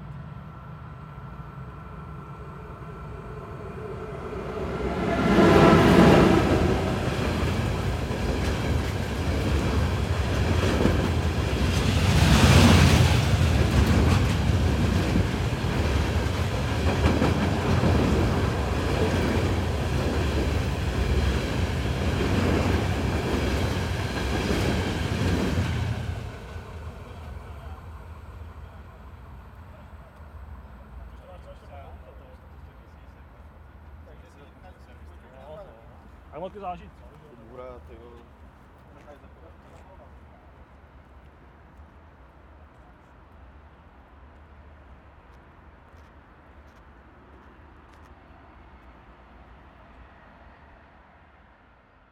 Close freight train on bridge, walkers pass by, Vltavanů, Praha, Czechia - Close freight train on bridge, 4 walkers pass by